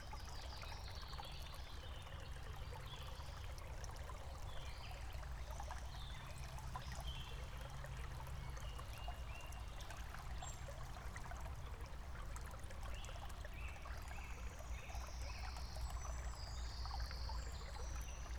Atkociskes, Lithuania, after the rain
Atkočiškės, Lithuania, 1 July 2017